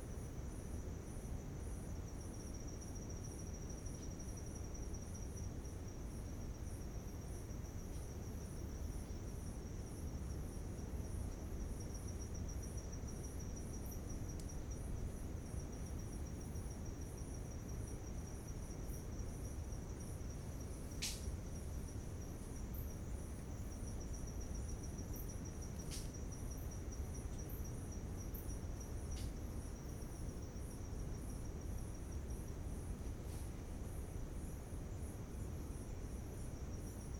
Lagoinha do Leste, Florianópolis, Santa Catarina, Brazil - Camping Night Sound from Lagoinha do Leste beach
Right before a stormy night comes, I recorded this sound while layed down to sleep, it was calm and quiet.
recorded with a ZoomH1
Região Sul, Brasil